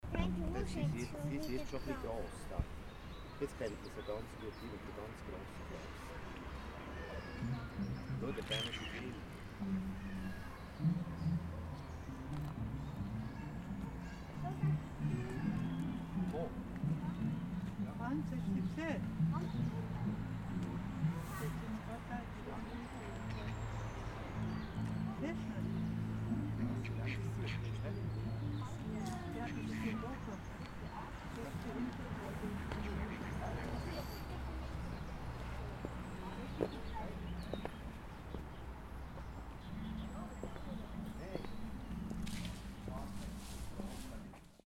Sonntagnachmittag im Marzil oder dem Marzer. Ein Freibad mit öffentlichem Durchgang und gratis. Schon früh badeten hier die Mädchen ohne Schamtücher, eine bewegte Geschichte begleitet das berühmte Bad an der Aare.
Bern, Schweiz, 16 October